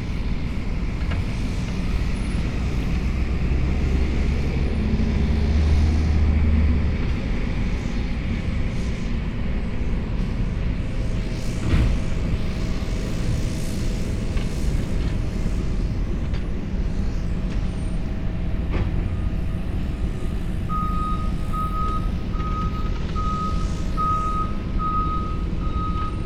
Albion St, Los Angeles, CA, USA - Construction of Albion Riverside Park
Morning construction sounds during the final construction phases of Albion Riverside Park.
Los Angeles County, California, United States of America, July 2018